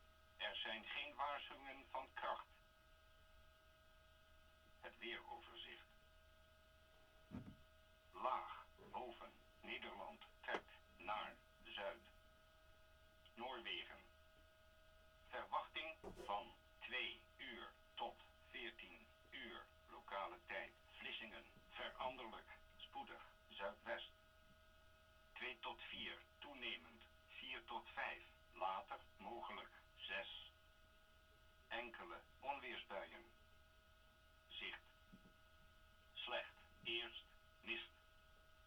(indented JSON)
{
  "title": "workum, het zool: marina, berth h - the city, the country & me: wheather forecast",
  "date": "2013-06-20 23:20:00",
  "description": "wheather forecast of the netherlands coastguard at 11:05 pm on channel 83\nthe city, the country & me: june 20, 2013",
  "latitude": "52.97",
  "longitude": "5.42",
  "altitude": "1",
  "timezone": "Europe/Amsterdam"
}